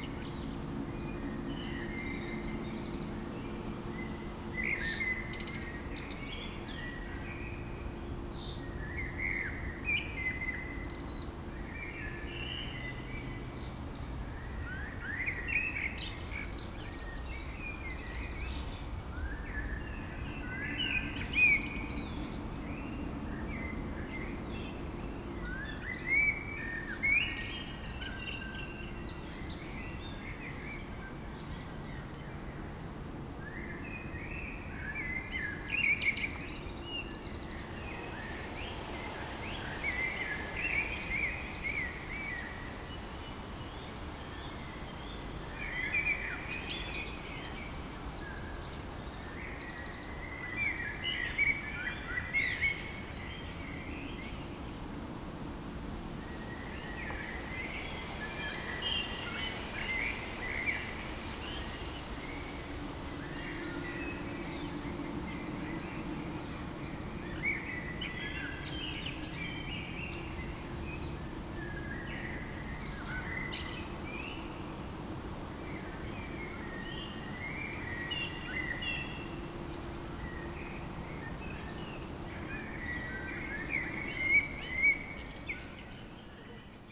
Beselich Niedertiefenbach, Ton - evening ambience
place revisited, warm summer evening
(Sony PCM D50, internal mics)
Germany